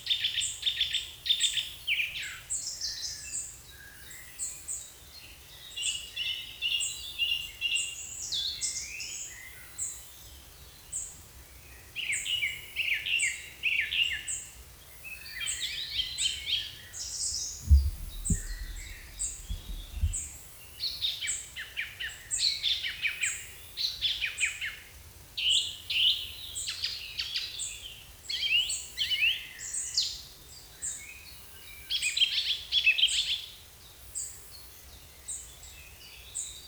Brajni, Kastav, Blackbirds-forest - Brajani, Kastav, Blackbirds-forest
Blackbirds, cuckoo, other birds
rec setup: X/Y Sennheiser mics via Marantz professional solid state recorder PMD660 @ 48000KHz, 16Bit
Croatia, 22 May 2003, 20:00